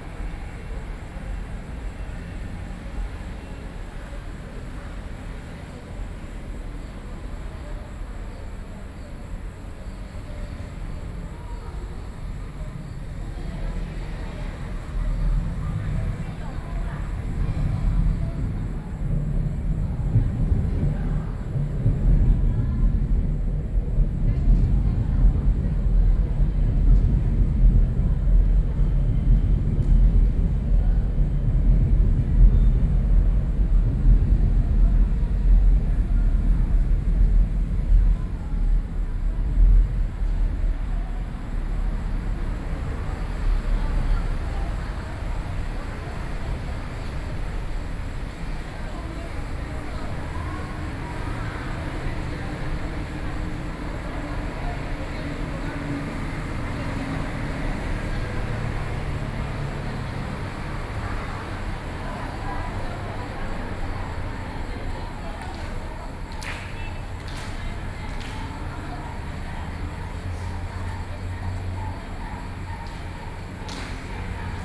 Beitou, Taipei - In the bottom of the track
In the bottom of the track, Environmental Noise, Sony PCM D50 + Soundman OKM II